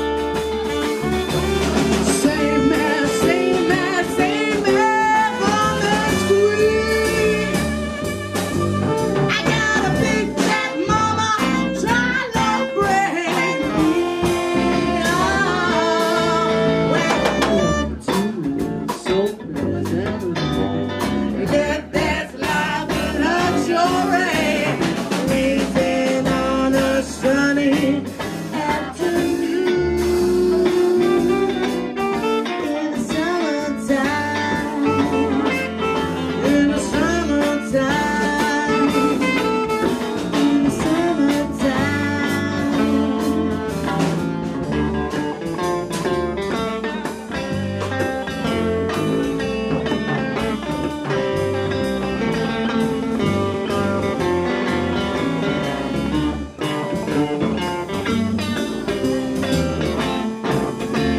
Sunny Afternoon, performed in Prague in a souterrain pub
A good old song reappears, being sung by DUCHESS & THE KITTENS, being sung, not just hummed or thought to be sung...
4 November 2010, 20:24